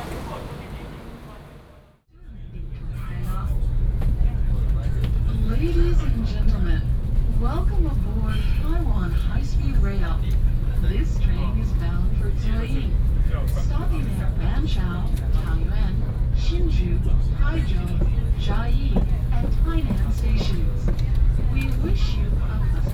Taipei, Taiwan - Taipei Main Station
台北市 (Taipei City), 中華民國, 3 April, 16:57